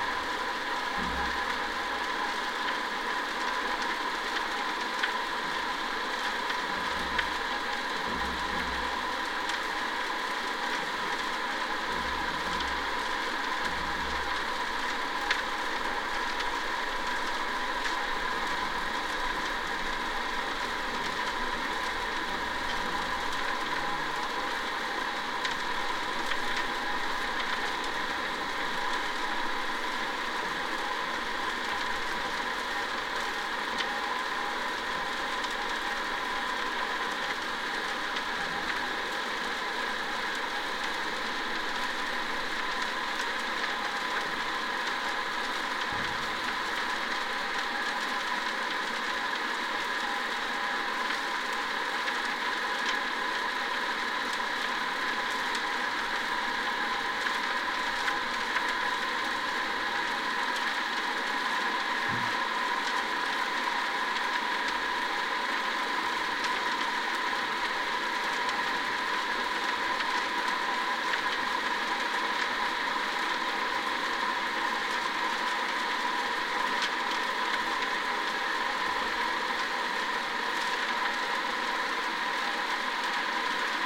Dubingiai, Lithuania, lake Asveja underwater
hydrophone recording of lake/river Asveja. there's construction works of new bridge, so probably we hear some pump or something...
23 May 2020, 17:25